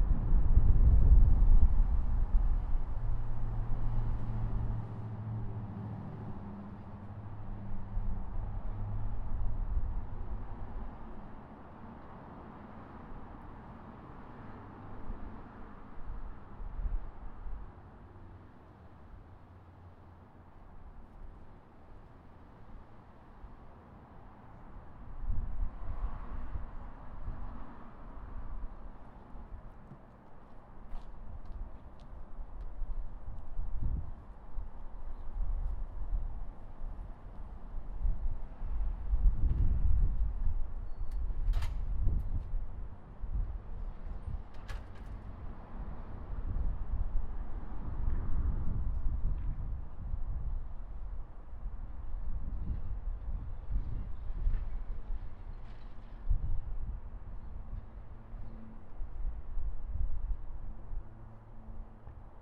E Dale St, Colorado Springs, CO, USA - CCInnFrontEnterance28April2018
Recorded at CC Inn Front Entrance at 2:55pm. Facing east. Recorded with a dead cat cover on a Zoom H1 recorder. Cars, footsteps, an airplane, and distant construction are all part of the soundscape.
26 April 2018